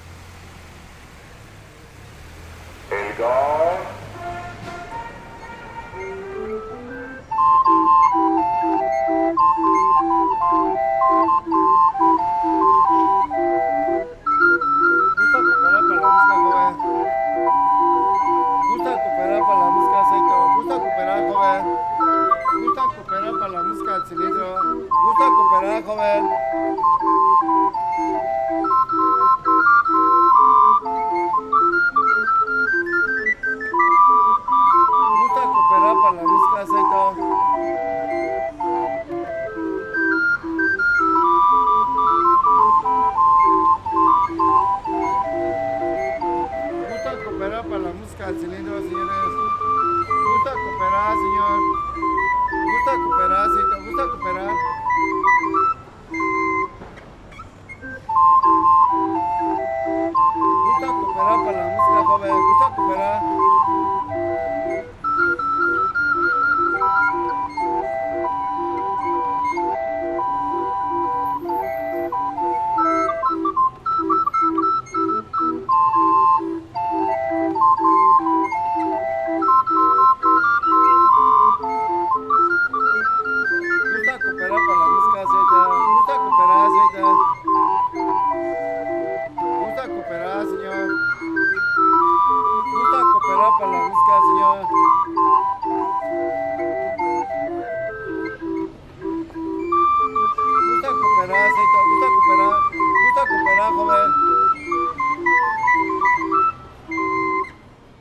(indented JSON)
{
  "title": "de Mayo, Centro histórico de Puebla, Puebla, Pue., Mexique - Puebla - Orgue de Barbarie",
  "date": "2019-09-20 11:30:00",
  "description": "Puebla (Mexique)\nLe joueur d'orgue de Barbarie",
  "latitude": "19.05",
  "longitude": "-98.20",
  "altitude": "2157",
  "timezone": "America/Mexico_City"
}